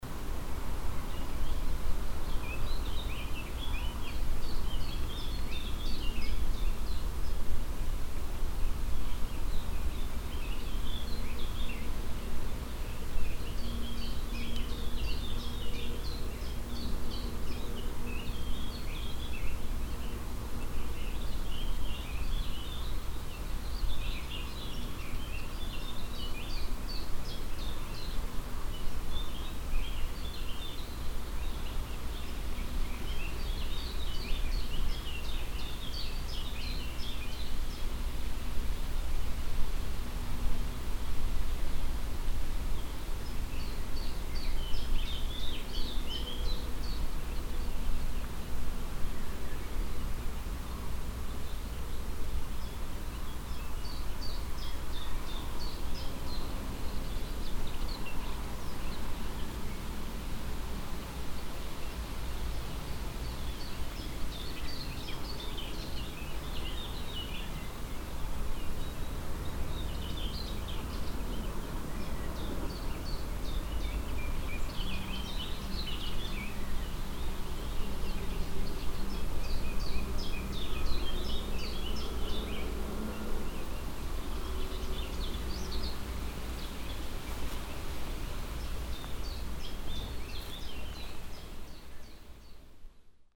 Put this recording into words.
The mellow spring wind playing with the leafes. Recorded in the early afternoon while walking at the Hoscheid Klangwanderweg - sentier sonore. Hoscheid, Wind in den Bäumen, Der sanfte Frühlingswind spielt mit den Blättern. Aufgenommen am frühen Nachmittag bei einem Spaziergang auf dem Klangwanderweg von Hoscheid. Hoscheid, vent dans les arbres, Le doux vent du printemps jouant avec les feuilles. Enregistré en début d’après-midi en promenade sur le Sentier Sonore de Hoscheid. Projekt - Klangraum Our - topographic field recordings, sound art objects and social ambiences